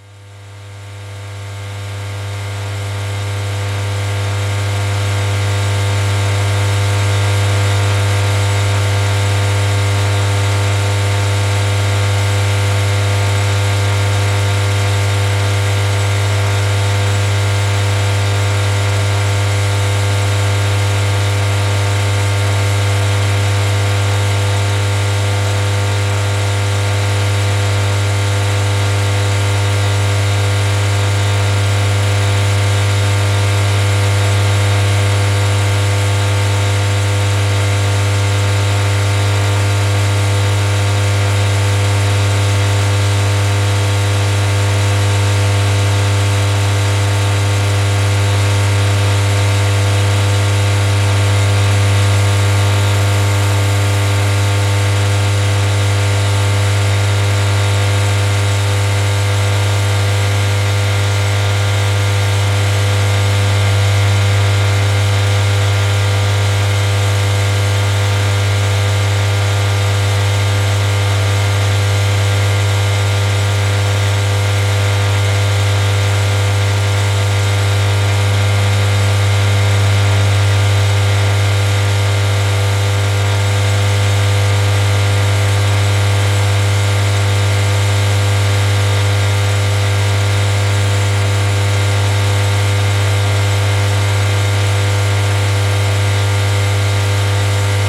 Close up recording of a humming electrical substation transformer box. Recorded with ZOOM H5.
Kauno rajono savivaldybė, Kauno apskritis, Lietuva